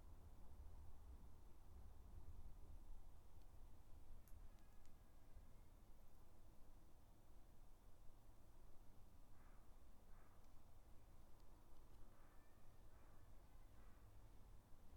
Dorridge, West Midlands, UK - Garden 9
3 minute recording of my back garden recorded on a Yamaha Pocketrak
Solihull, UK, 13 August